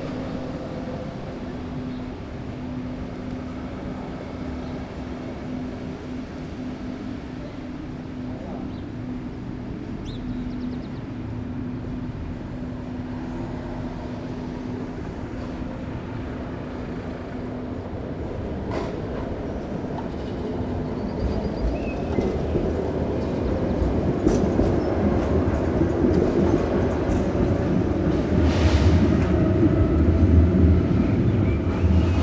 Rue Paul Eluard, Saint-Denis, France - Outside Theatre Gerard Philipe
This recording is one of a series of recording, mapping the changing soundscape around St Denis (Recorded with the on-board microphones of a Tascam DR-40).
25 May